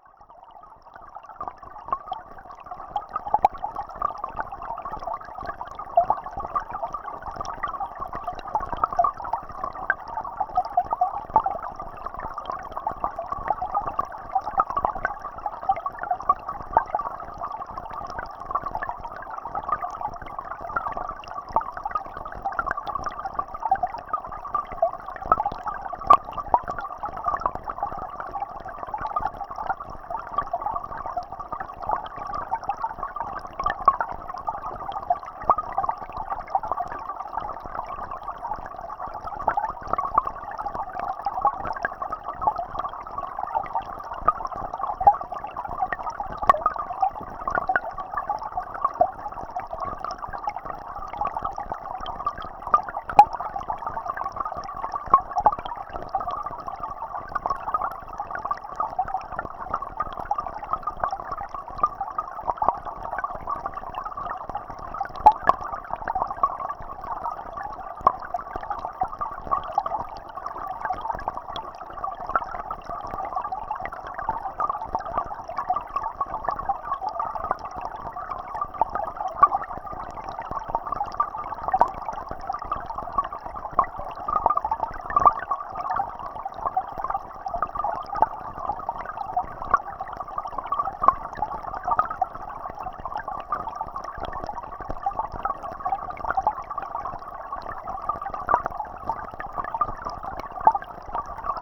Hydrophone in mineral water stream